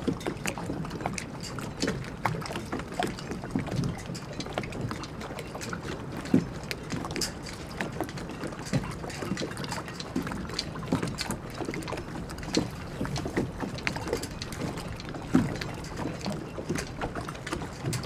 La nuit sur le port de ploumanac'h, les bateaux barbotent énergiquement le vent fait siffler les cordages et les mats s'entrechoquent.
A night at the Port, Boats are splashing, wind is whistling, masts are chiming.
Close up.
/Oktava mk012 ORTF & SD mixpre & Zoom h4n